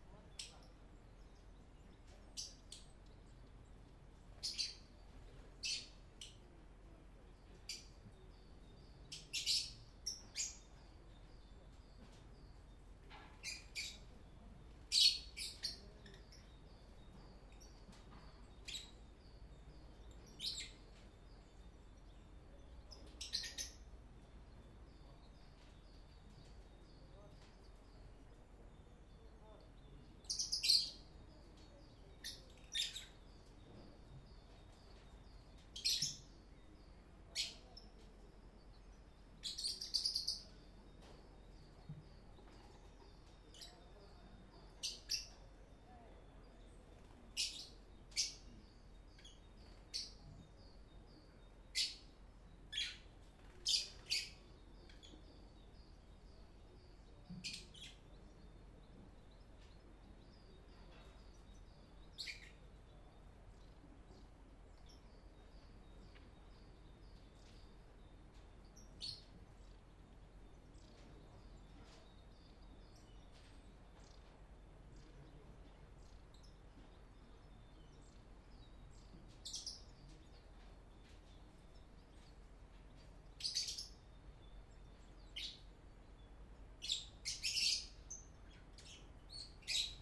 villa roccabella
dans le jardin pres dune volliére